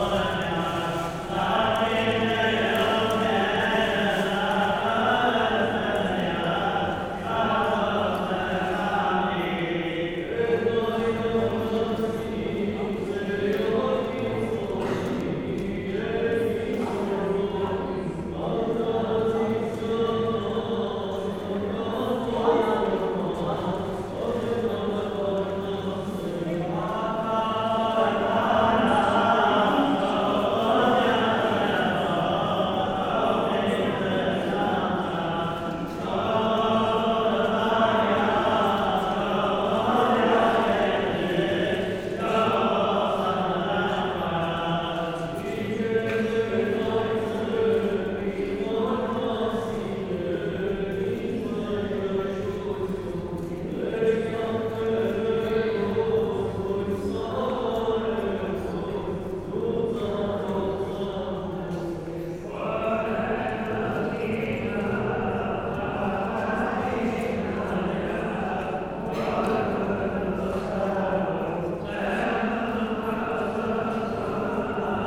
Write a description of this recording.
Orthodox Deacon and Priest sing during the first part of the church service : preparing the sacraments. Everyone is moving into the church, so people make a lot of noise.